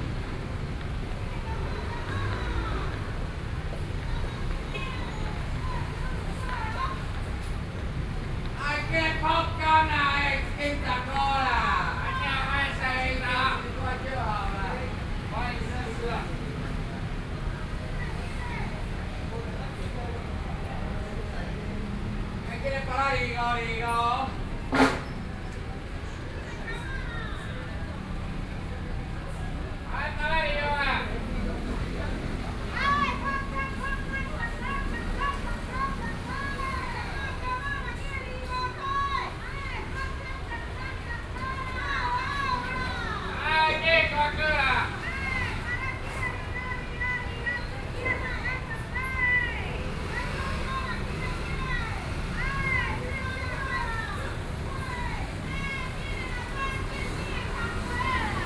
Zhonghua St., Luzhou Dist., New Taipei City - Selling sound
In front the fruit shop, Selling sound, Traffic Noise, Binaural recordings, Sony PCM D50 + Soundman OKM II